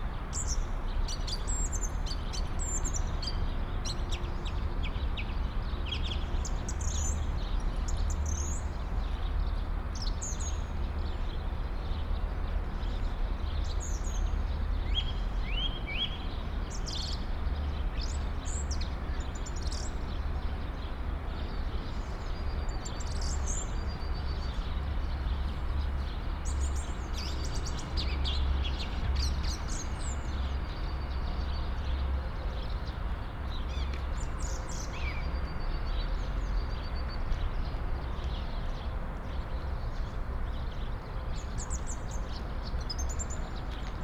a Song thrush (german: Singdrossel), Turdus philomelos, in a tree, on a patch of grass between Plattenbau buildings, parking spaces and abandoned objects. Traffic drone from the nearby Autobahn.
(Sony PCM D50, DPA4060)